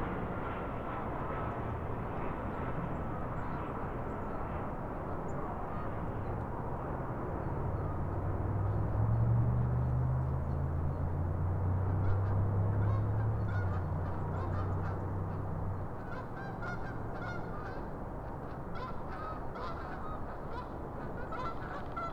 Recorded at the trailhead for the Big Rivers Regional Trail. This spot overlooks the Minnesota River and is under the arrival path for runways 30L and 30R at Minneapolis/St Paul International Airport. Landing planes as well as wildlife and road noise from nearby I-494 can be heard.